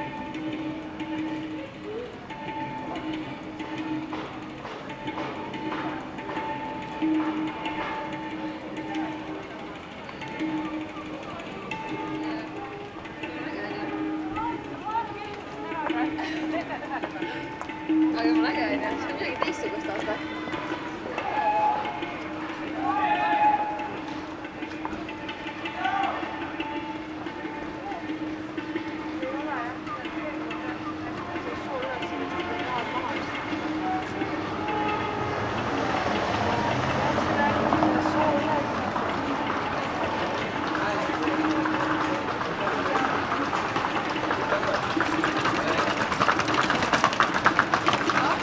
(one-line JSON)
{"title": "Tallinn, Lai - East meets South meets North", "date": "2011-04-15 22:46:00", "description": "A group of Italian tourists with their suitcases arrive to Lai street where music is played at the Open gallery in front of the hostel. street, east, south, north, music", "latitude": "59.44", "longitude": "24.74", "altitude": "30", "timezone": "Europe/Tallinn"}